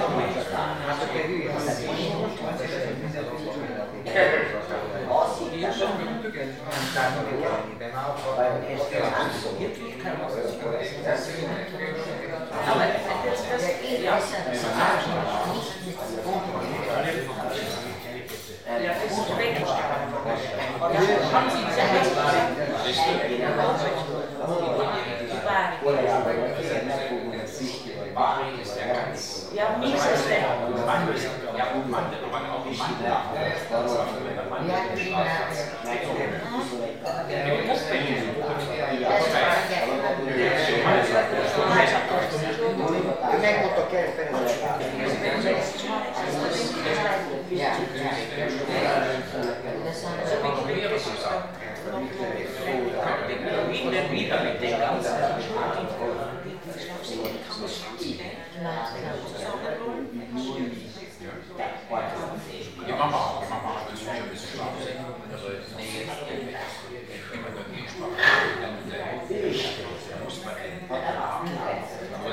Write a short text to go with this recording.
indoor atmo in another famous hungarian cafe -steps, loud speeches and sounds from the kitchen, international city scapes and social ambiences